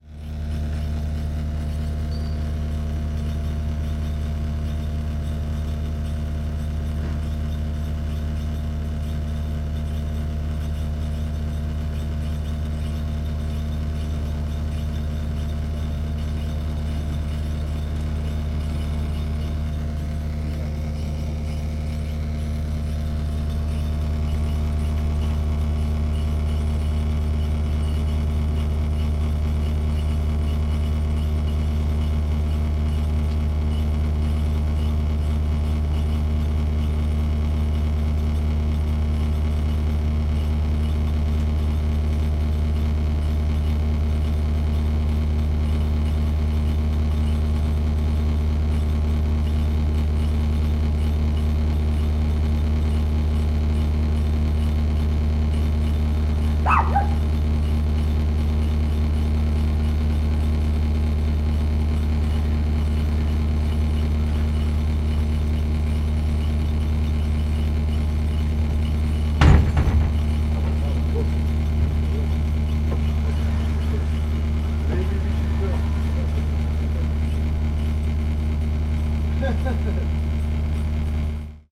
Rue Bussy lIndien, Marseille, France - Old Toshiba air conditioning, used book store.
Its full of clicking, squeaking, voices, puppy, impact, laughter.